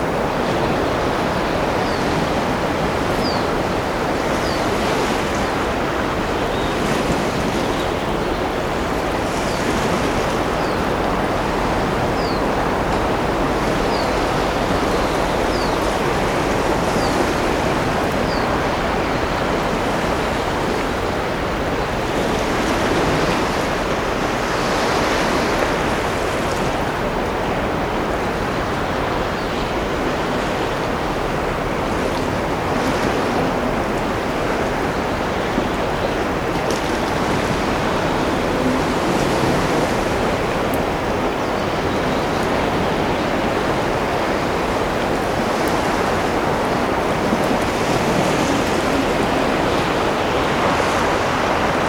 Spitham, in the woods near the sea
Morning, forest, beach, sea